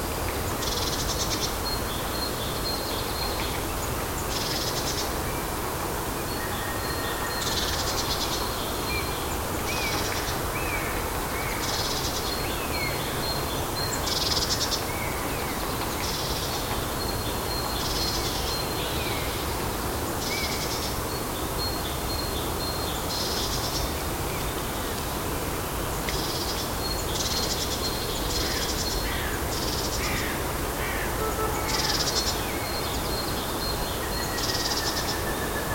Odenwald Buzzards, 2nd March 09
Lautertal, Germany